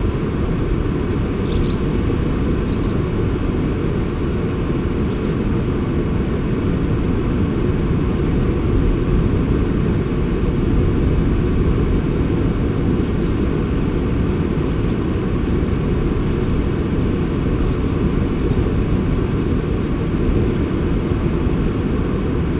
{
  "title": "North Side, Staithes, Saltburn-by-the-Sea, UK - sand martins under the cliff ...",
  "date": "2007-07-16 09:40:00",
  "description": "sand martins under the cliff ... colony ... one point stereo mic to minidisk ... background noise of waves ... dogs ... voices ...",
  "latitude": "54.56",
  "longitude": "-0.79",
  "timezone": "Europe/London"
}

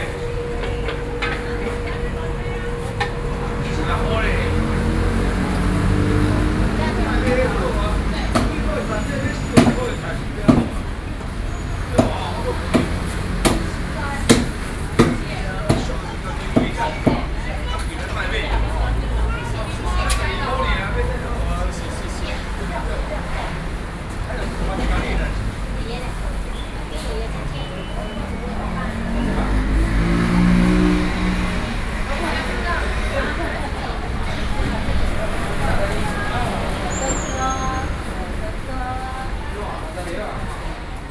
{
  "title": "Zhōngzhèng Rd, Xindian District - Traditional markets",
  "date": "2012-11-07 08:47:00",
  "latitude": "24.97",
  "longitude": "121.54",
  "altitude": "26",
  "timezone": "Asia/Taipei"
}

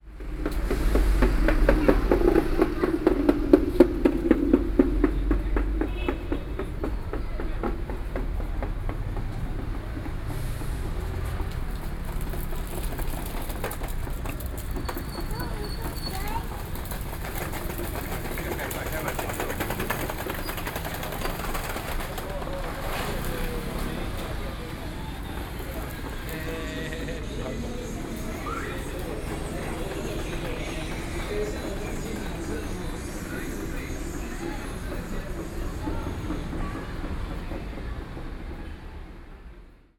{
  "title": "Sanchong, New Taipei city - SoundWalk",
  "date": "2012-10-05 21:41:00",
  "latitude": "25.07",
  "longitude": "121.50",
  "altitude": "9",
  "timezone": "Asia/Taipei"
}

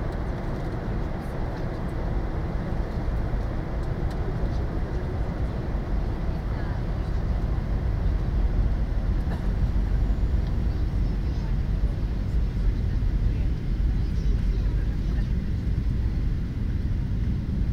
{
  "title": "Airport, Alicante, Spain - (02) Inside airplane during landing",
  "date": "2016-11-02 23:57:00",
  "description": "Recording of a landing in Alicante. Ryanair flight from Krakow.\nRecorded with Soundman OKM on Zoom H2n.",
  "latitude": "38.28",
  "longitude": "-0.55",
  "altitude": "29",
  "timezone": "Europe/Madrid"
}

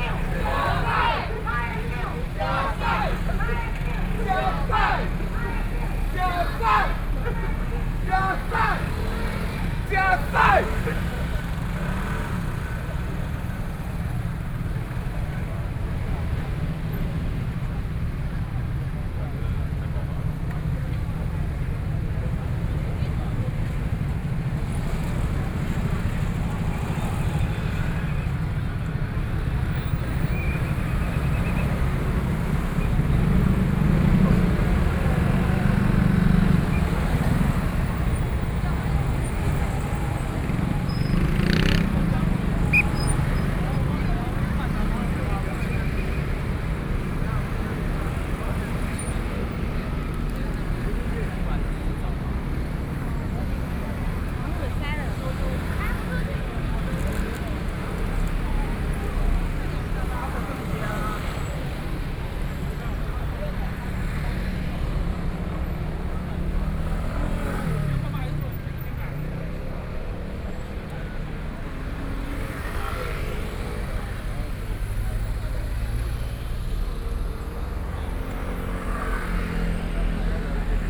{
  "title": "Linsen S. Rd., Taipei City - on the Road",
  "date": "2014-03-30 15:07:00",
  "description": "Packed with people on the roads to protest government, Walking through the site in protest, People cheering, Nearby streets are packed with all the people participating in the protest, The number of people participating in protests over fifty\nBinaural recordings, Sony PCM D100 + Soundman OKM II",
  "latitude": "25.04",
  "longitude": "121.52",
  "altitude": "6",
  "timezone": "Asia/Taipei"
}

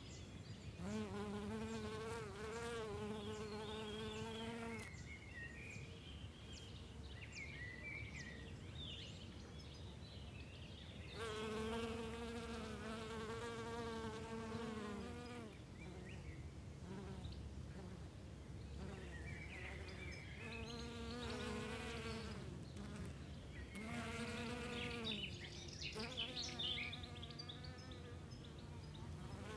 {
  "title": "Tivoli, Colle Castello, The birds and the bees",
  "date": "2011-09-14 12:03:00",
  "description": "Colle Castello: casa dolce casa, le api e gli uccelli...\nThe birds and the bees, summer.\nLoop.",
  "latitude": "41.95",
  "longitude": "12.84",
  "altitude": "284",
  "timezone": "Europe/Rome"
}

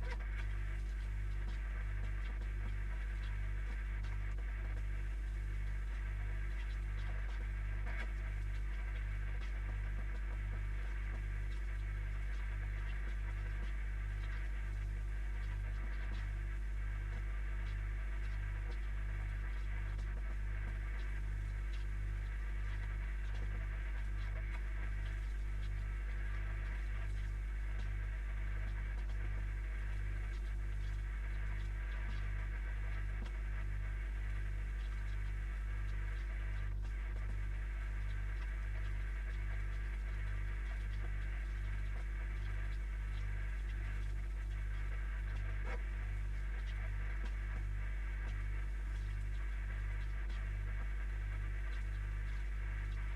{
  "title": "remscheid: johann-sebastian-bach-straße - the city, the country & me: refrigerator",
  "date": "2014-03-27 22:55:00",
  "description": "inside a refrigerator\nthe city, the country & me: march 27, 2014",
  "latitude": "51.18",
  "longitude": "7.18",
  "altitude": "347",
  "timezone": "Europe/Berlin"
}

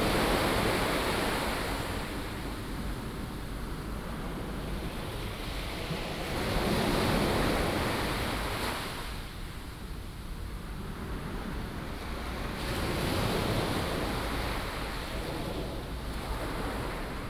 {
  "title": "Qianshuiwan Bay, Sanzhi Dist., New Taipei City - Sound of the waves",
  "date": "2016-04-15 07:46:00",
  "description": "Waterfront Park, Sound of the waves, Aircraft flying through",
  "latitude": "25.25",
  "longitude": "121.47",
  "altitude": "20",
  "timezone": "Asia/Taipei"
}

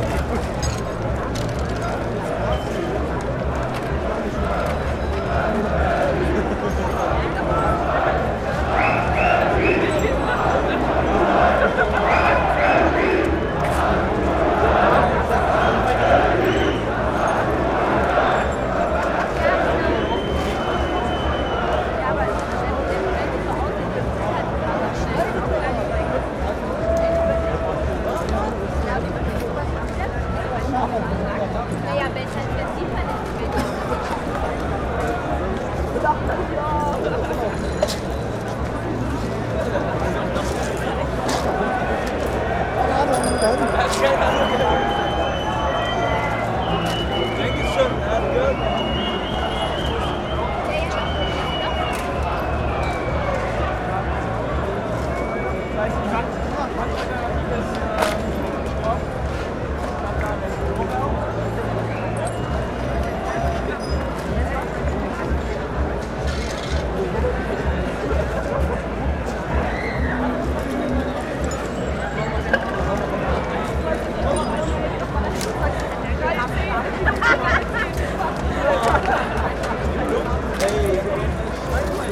{
  "title": "berlin, kottbusser tor",
  "date": "2011-05-01 23:50:00",
  "description": "aftermath of the 1st of may demonstration, people gathering at kottbusser tor",
  "latitude": "52.50",
  "longitude": "13.42",
  "altitude": "39",
  "timezone": "Europe/Berlin"
}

